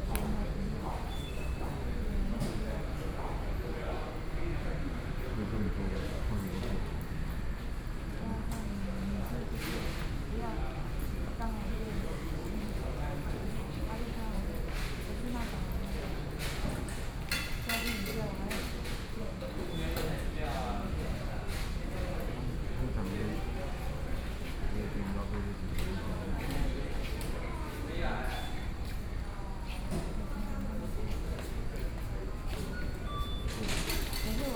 Zhubei Station, Taiwan - Station hall
The new station hall, Zoom H4n+ Soundman OKM II